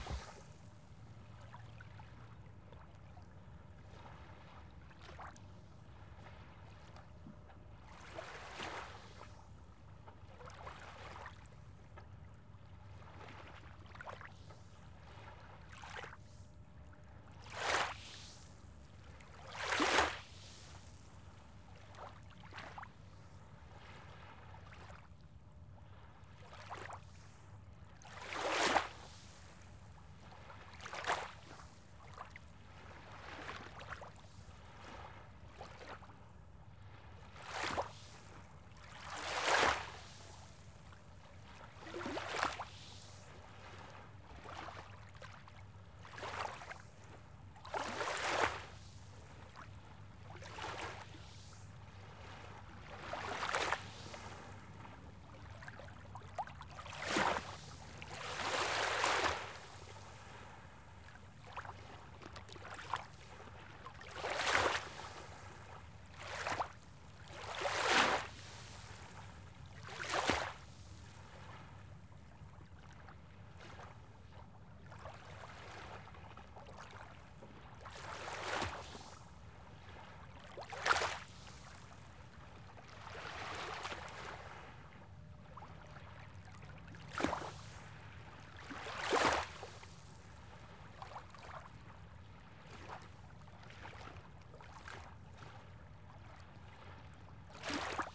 Southampton, NY, USA - Shoreline of the Bay
Recording of the beach shore at Elizabeth Morton Park.